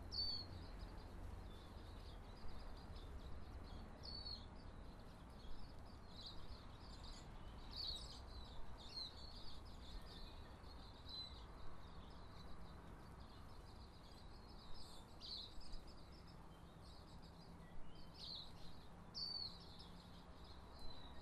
22 Adderley Terrace, Ravensbourne, DUNEDIN, New Zealand
Bellbirds, wax-eyes & a suburban Sunday orchestra